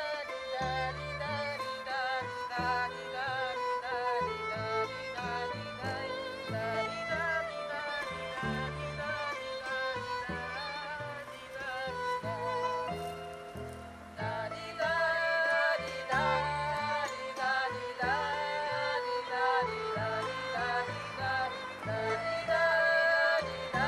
Kalinowskiego/Białostocki Teatr Lalek, Białystok, Poland - Wschód Kultury - Inny Wymiar 2018 cz.3
30 August